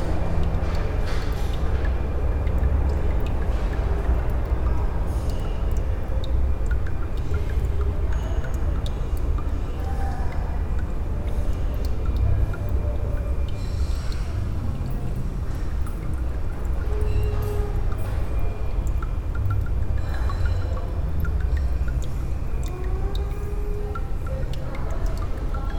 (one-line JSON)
{"title": "Saint-Josse-ten-Noode, Belgium - A dripping tap", "date": "2013-06-19 15:00:00", "description": "At the end of the big glasshouse, just above one of the nice indoors pools, there is a dripping tap. So nice to listen to a tiny sound in this place full of massive sounds. Recorded just with EDIROL R-09.", "latitude": "50.86", "longitude": "4.37", "altitude": "49", "timezone": "Europe/Brussels"}